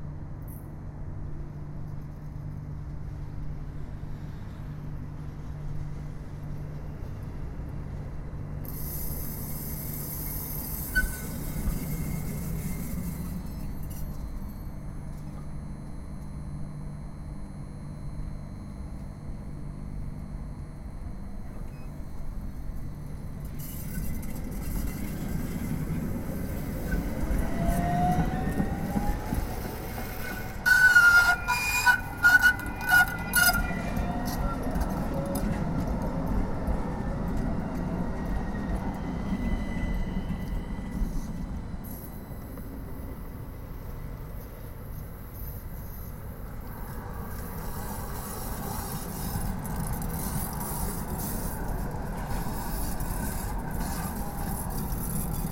Largo Paolo Grassi, 20121 Milano, Italia (latitude: 45.4732 longitude: 9.18265
tram di milano (romanlux) Edirol r-09hr
1/2/2010 h13,30
Italia, European Union